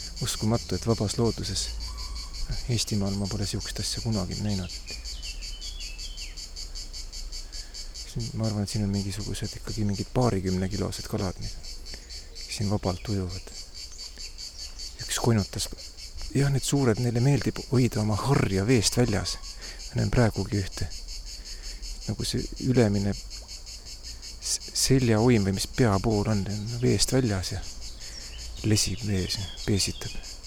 Walking & talking. Text:
This riverside is so mysteriously awesome. I found another access. There's a bamboo field and some ancient trees bowing above the river which seems to be deep because I can't see the bottom, yet the water is clear. The fish in the river are so big, hardly measurable with arms-length! I can't believe seeing it in the open nature.... I've never seen anything like this in Estonia. I think these fish would weigh around 20 kilos. I see one now as I speak - floating in the water, upper fin next to the head reaching out of the water, sunbathing, like these elderly people up on the balcony there. The big trouts, big in the Estonian sense, you can see their flocks here... I'm going back to the street.
After having been hiking up and down and up and down in the mountains of Bad Urach, these little ups and downs of this Mediterranean city seem nearly insignificant. It's only about thirty miles to Saint Tropez, by the way.
Here it is, my hotel corner.